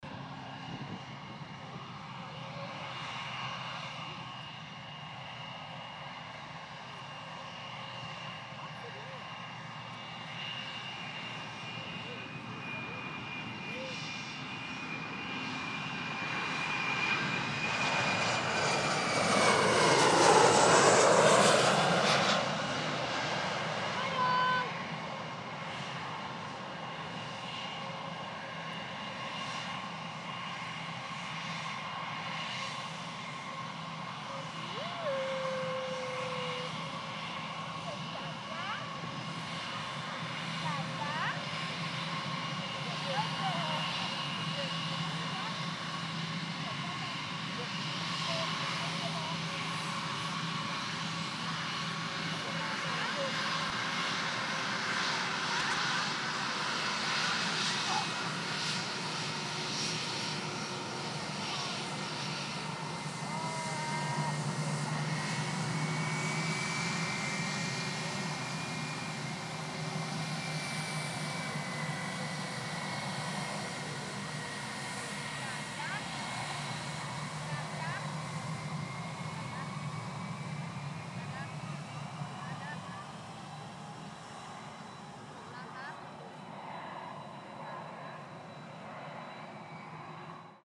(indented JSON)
{
  "title": "The Airport Pub",
  "date": "2010-09-30 15:20:00",
  "description": "Pub, family, airplane, children",
  "latitude": "53.36",
  "longitude": "-2.26",
  "altitude": "78",
  "timezone": "Europe/London"
}